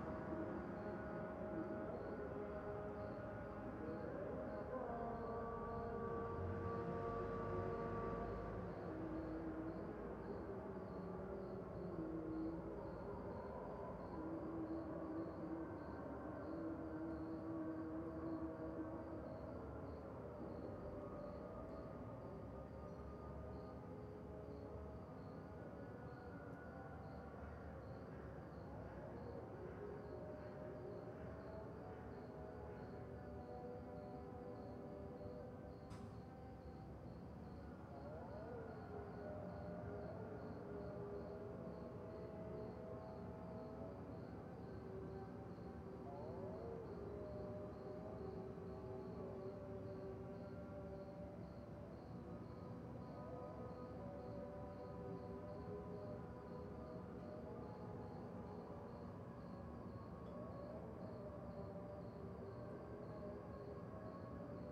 Maadi as Sarayat Al Gharbeyah, Maadi, Al-Qahira, Ägypten - early morning prayer on 2012 05 03 @ 03:34
i a way these morning prayers represent soundmarks that are to be listened to at regular daily times all over the city. especially early in the morning there it is a good moment to choose to listen to the sound of the city, where the cultural and achitectural soundscape lighten up audibly the surrounding whereIn the soundlevel of traffic yet is pretty low...
this recording was made at 03:30 in the morning and the prayers started at 03:34 ( 2 X neumann KM184 + sounddevice 722 - AB)
Al Maadi, Cairo, Egypt, 3 May 2012